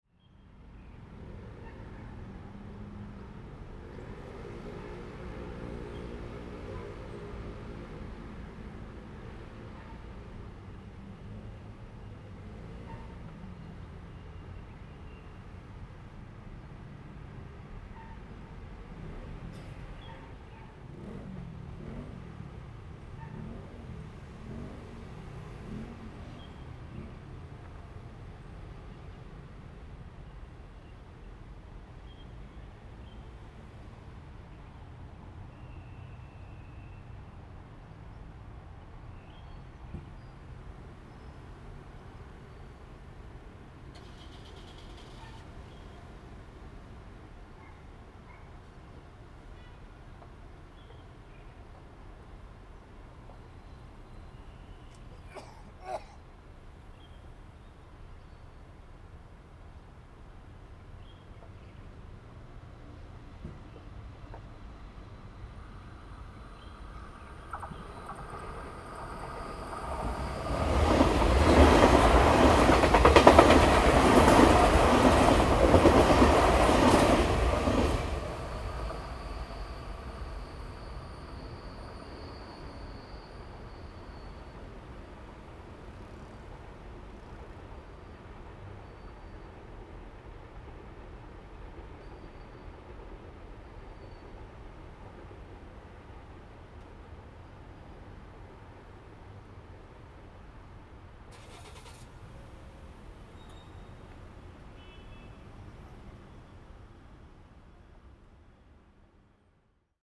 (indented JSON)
{
  "title": "建功地下道, 苗栗市 - The train runs through",
  "date": "2017-03-22 17:07:00",
  "description": "The train runs through, Next to the tracks, Bird sound, Traffic sound\nZoom H2n MS+XY +Spatial audio",
  "latitude": "24.56",
  "longitude": "120.82",
  "altitude": "53",
  "timezone": "Asia/Taipei"
}